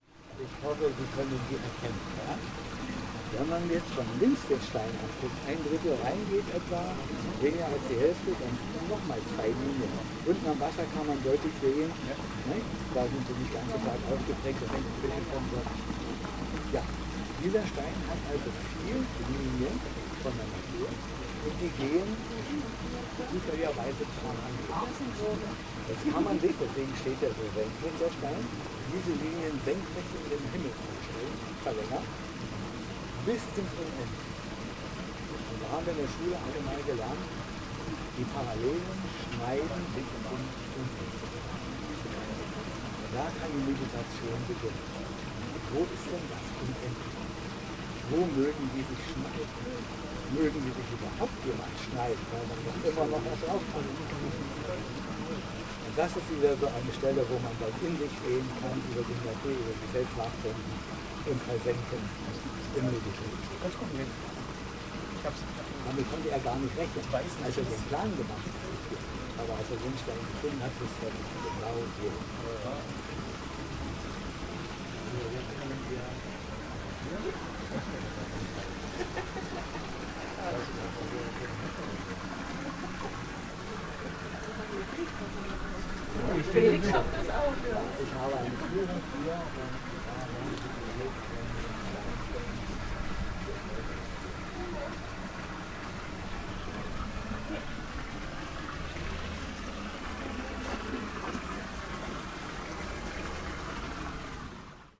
Berlin, Gardens of the World, Japanese Garden - stones
tourguide explains why the stones in a Japanese garden are placed in a particular way and what certain grooves on the stones represent.